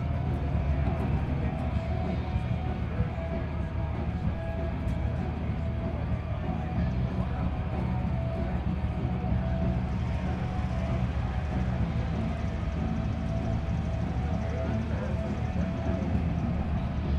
neoscenes: sidewalk with girl and guy talking
AZ, USA, 16 July 2011